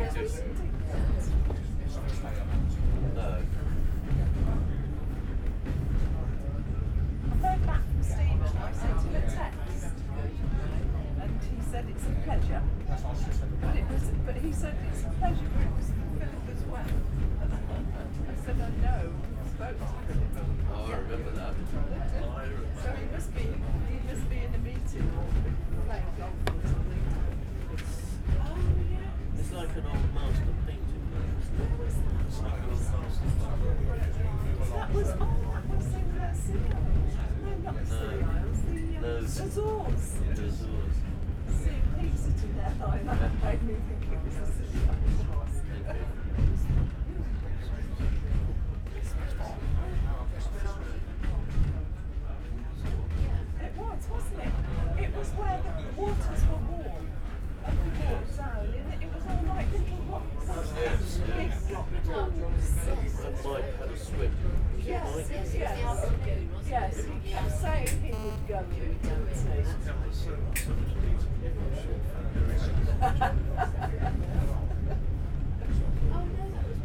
South Devon Railway, Staverton, Totnes, UK - Steam Train Ride on the South Devon Railway.
Steam train journey between Buckfastleigh and Staverton. The sharp snapping sound is the guard clipping tickets. There is also the sound of the creaking carriage and an occasional hoot of the engines whistle. Recorded on a Zoom H5